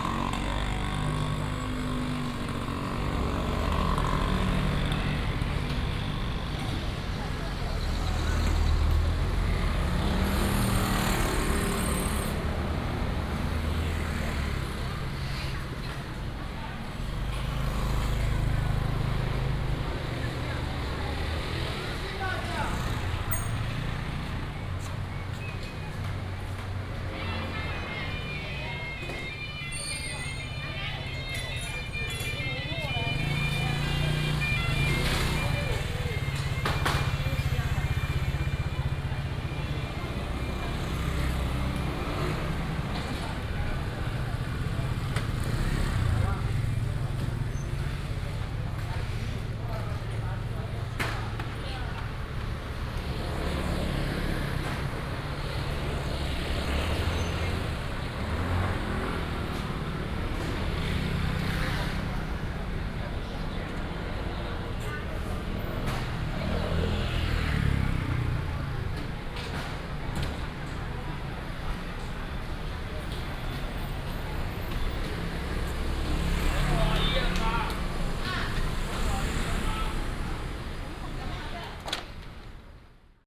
Ln., Minzu Rd., Zhongli Dist. - Fruit wholesale business district
Fruit wholesale business district, traffic sound
Taoyuan City, Taiwan, 27 June 2017